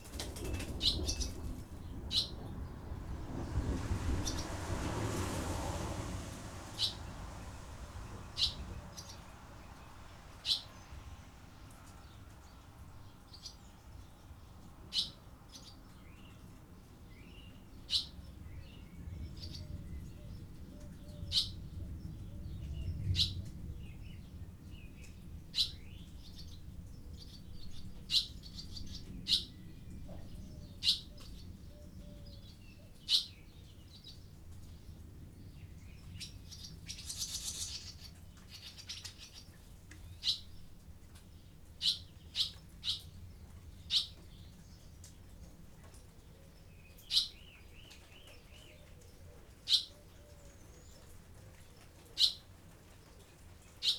Under the shed .... in a thunderstorm ... recorded with Olympus LS 11 integral mics ... the swallows had fledged that morning and left the nest ... an approaching thunderstorm arrived ... lots spaces in the sounds ... both birds and thunderclaps ... bird calls from ... song thrush ... collared dove ... background noise and traffic ...